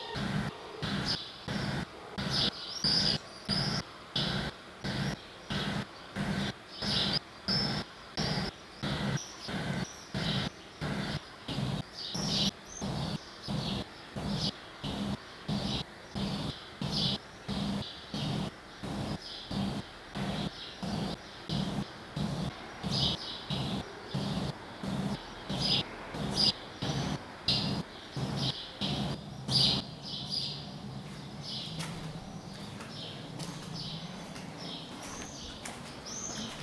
Vallecas, Madrid - Fibonacci Flash-Forward [F(0)-F(11)] #WLD2018
Acoustic Mirror: Fibonacci Flash-Forward [F(0)-F(11)] #WLD2018 ---
Go out on a soundwalk. Listen. Walk. Make your steps follow a
Fibonacci sequence. Listen to your steps. Listen to the
numbers. Listen a few steps ahead into the future. Walk a few steps
ahead into the future.